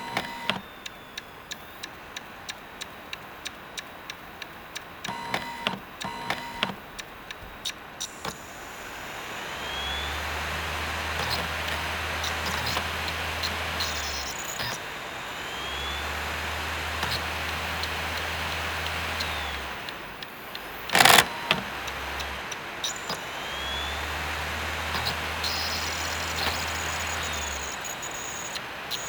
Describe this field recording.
cd drive tries to read a different cd. last attempt to get the data. time to give up for good. the glitchy sounds vary from cd to cd but I have no idea what drives the different behavior of the drive. The cd don't seem to be scratched or anything. One can basically get a whole range of sounds just by switching the cd. this particular one reminds me a bit of Oval's track called Textuell. (roland r-07)